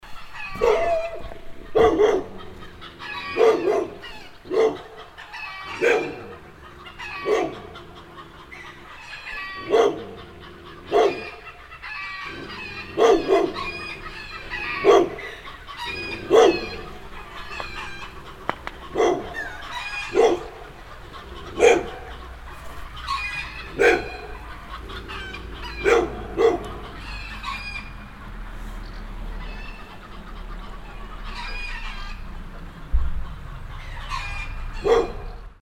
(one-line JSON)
{"title": "lellingen, hens and dog", "date": "2011-08-03 19:08:00", "description": "A chicken shed on a farm yard. The chicken cackle loud and the yard dog is barking\nLellingen, Hennen und ein Hund\nEin Hühnerschuppen auf einem Bauernhof. Die Hühner gackern laut und der Hofhund bellt.\nLellingen, poules et chien\nUn poulailler dans une basse-cour. Les poulets caquètent bruyamment et le chien de la ferme aboie.\nProject - Klangraum Our - topographic field recordings, sound objects and social ambiences", "latitude": "49.98", "longitude": "6.01", "altitude": "291", "timezone": "Europe/Luxembourg"}